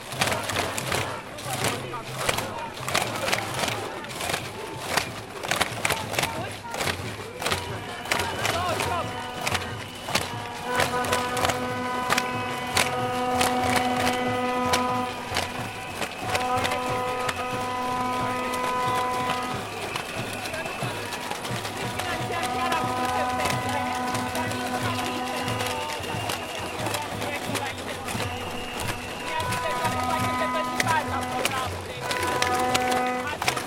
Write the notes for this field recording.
Protesting against a gold mining project that threatens Rosia Montana.